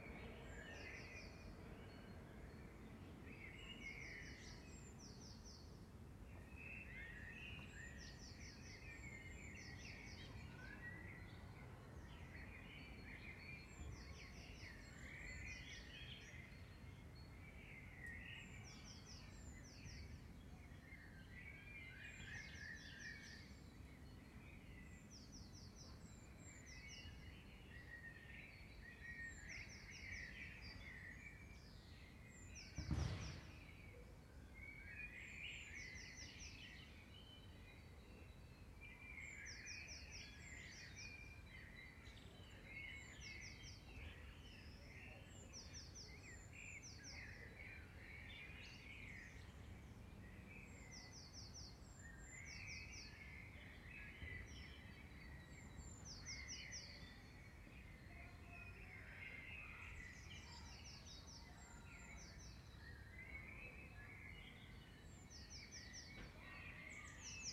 Recorded with two DPA 4061 Omni directional microphones in a binaural setup/format. Preferably listen with a decent pair of headphones. Easy and fairly calm evening in village on the outskirts of Amsterdam.
16 April 2018, ~22:00